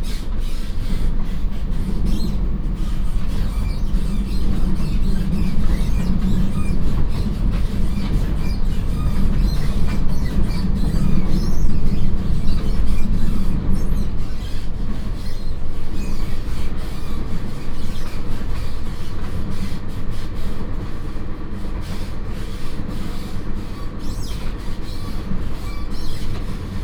Hsinchu City, Taiwan - Local Train
from Hsinchu Station to Sanxingqiao Station